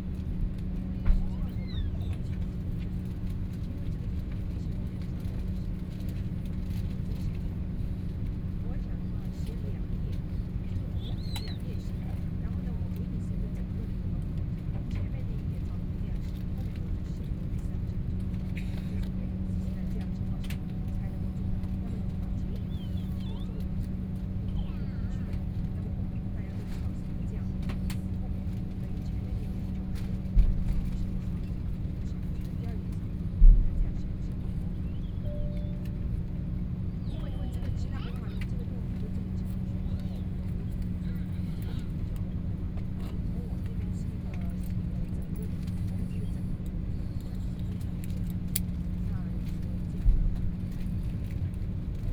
Hongqiao Airport, Shanghai - Inside the plane
Inside the plane, Aircraft interior voice broadcast message, Binaural recording, Zoom H6+ Soundman OKM II